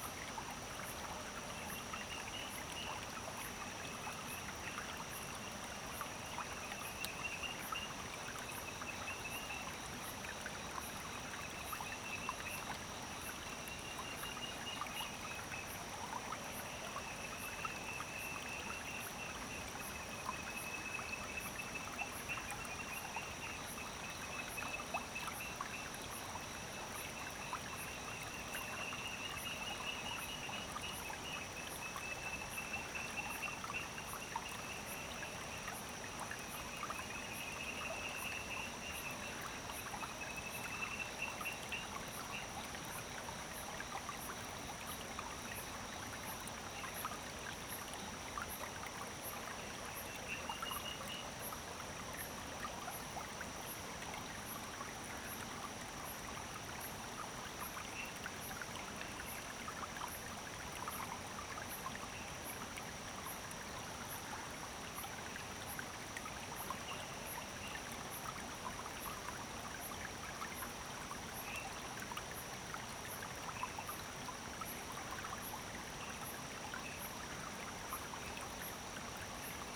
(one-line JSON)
{"title": "種瓜路50號, 埔里鎮桃米里 - Frogs and water sound", "date": "2016-07-14 00:29:00", "description": "Frogs and water sound\nZoom H2n MS+ XY", "latitude": "23.95", "longitude": "120.91", "altitude": "546", "timezone": "Asia/Taipei"}